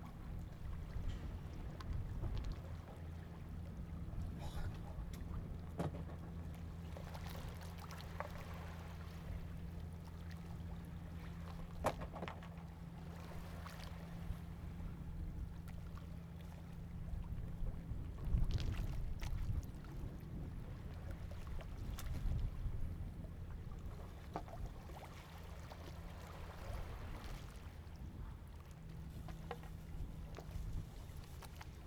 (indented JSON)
{
  "title": "菓葉村, Huxi Township - Small fishing port",
  "date": "2014-10-21 12:25:00",
  "description": "Small fishing port, In the dock, Tide\nZoom H2n MS+XY",
  "latitude": "23.58",
  "longitude": "119.68",
  "altitude": "4",
  "timezone": "Asia/Taipei"
}